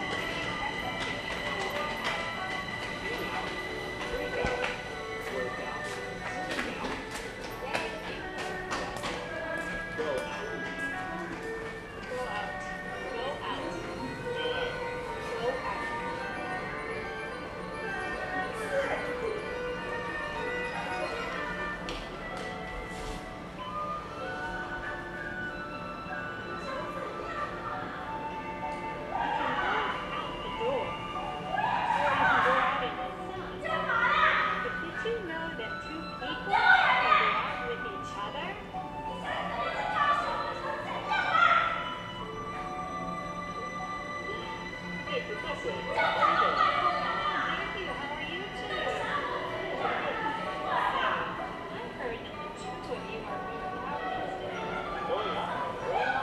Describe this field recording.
Couple quarrel shout, in the MRT platform, Sony ECM-MS907, Sony Hi-MD MZ-RH1